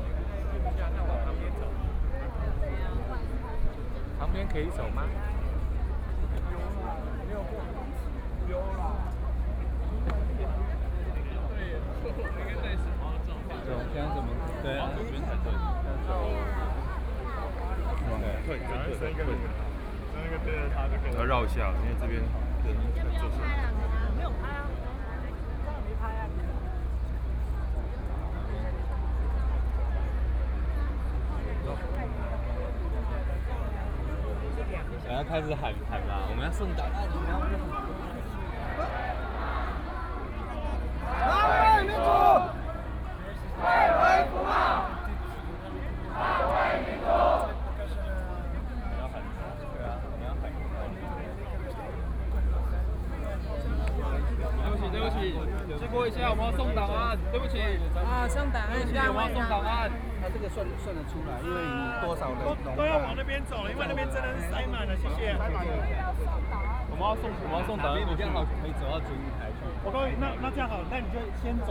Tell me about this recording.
Walking through the site in protest, People cheering, Nearby streets are packed with all the people participating in the protest, The number of people participating in protests over Half a million, Binaural recordings, Sony PCM D100 + Soundman OKM II